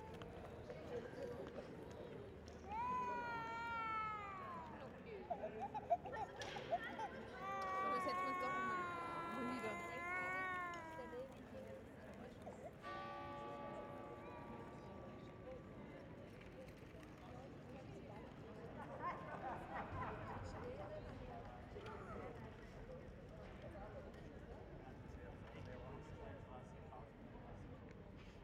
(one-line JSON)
{"title": "Domplatz, Salzburg, Österreich - Raumton Domplatz", "date": "2007-04-16 19:47:00", "description": "Etwas Näher. Die Besucher der Messe verabschieden sich vor der Kirche.", "latitude": "47.80", "longitude": "13.05", "altitude": "433", "timezone": "Europe/Vienna"}